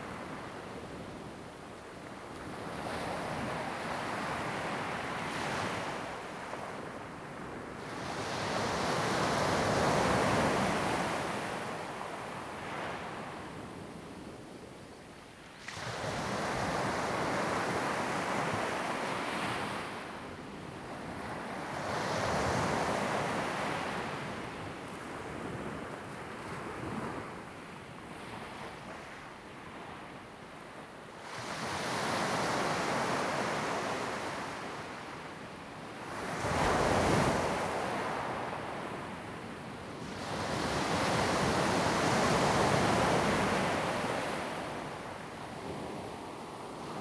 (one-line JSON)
{"title": "Ponso no Tao, Taiwan - Sound of the waves", "date": "2014-10-28 16:09:00", "description": "In the beach, Sound of the waves\nZoom H2n MS +XY", "latitude": "22.05", "longitude": "121.52", "altitude": "9", "timezone": "Asia/Taipei"}